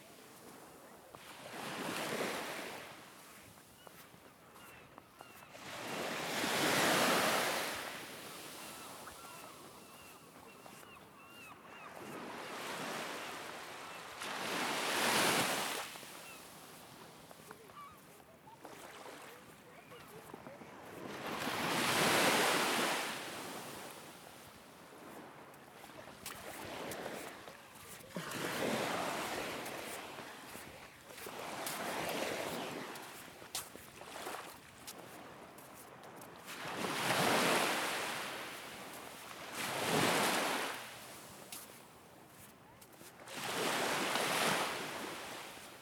Tregastel, Bretagne, France - walking on the beach [grève blanche]
Trégastel, Grève blanche, un soir.Marche sur la plage auprès des
vagues.quelques voix et mouettes au loin.Pieds dans le sable.
Trégastel Grève blanche Beach.Walking on the beach, waves
footsteps on sand.Somes voices and seagulls.
5 August, ~15:00